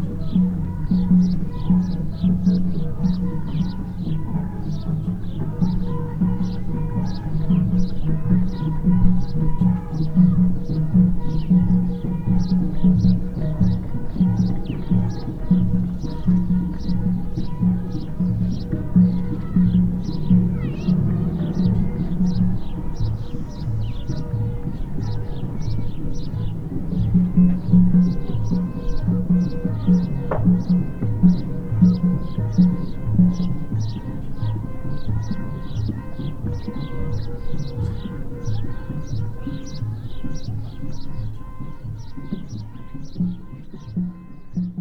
Community Centre, Tissardmine, Marokko - Moroccan Berber Wedding
Recorded with Sennheiser ME66, Mono